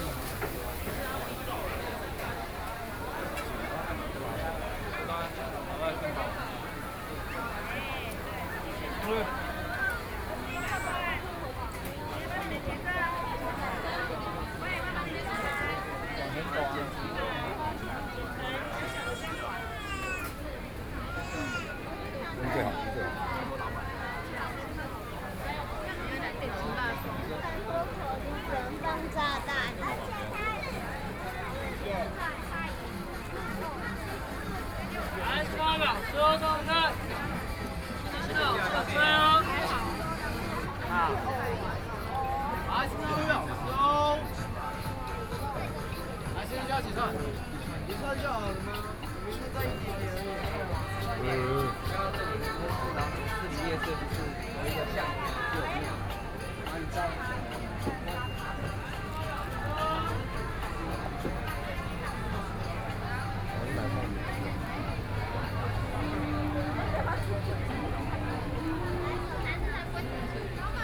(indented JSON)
{
  "title": "Gongyuan Rd., Luodong Township - Night Market",
  "date": "2014-07-27 19:45:00",
  "description": "walking in the Street, walking in the Night Market, Traffic Sound, Various shops voices, Tourist",
  "latitude": "24.68",
  "longitude": "121.77",
  "altitude": "13",
  "timezone": "Asia/Taipei"
}